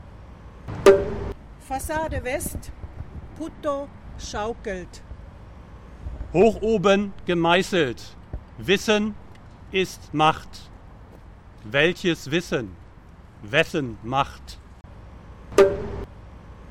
Echos unter der Weltkuppel 02 Wissen ist Macht